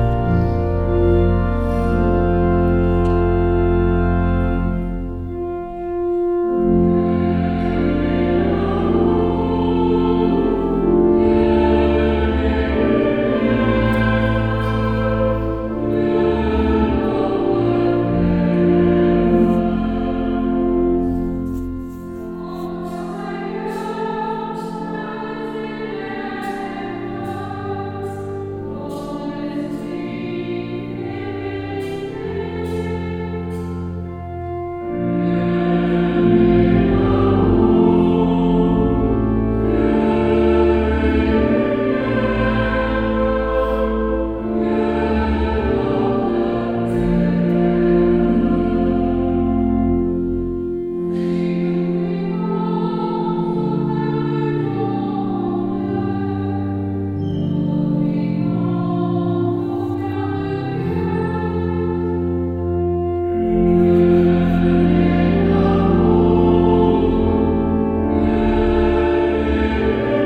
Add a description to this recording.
Inside the church at a mass. The sound of the outside church bells, the organ play and the singing of the catholic community. international village scapes - topographic field recordings and social ambiences